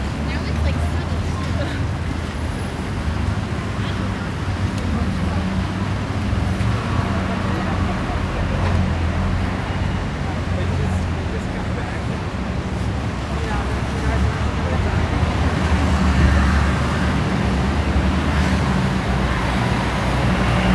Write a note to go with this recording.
Walk south down 5th Avenue from near Central Park on a busy morning. MixPre 3 with 2 x Beyer Lavaliers in a small rucksack on my back. The mics are in each ventilated side pocket with home made wind screens. This gives more stereo separation as the sound sources get closer. I have to be careful not to cause noise by walking too fast. I think the bells are St. Patricks Cathedral.